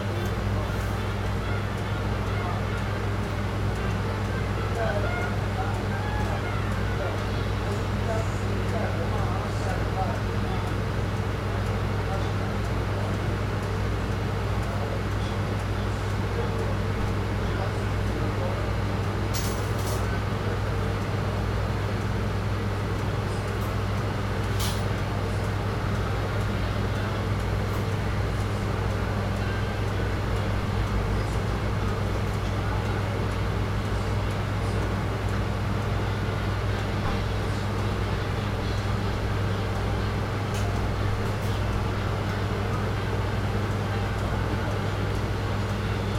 In convenience stores, Sony PCM D50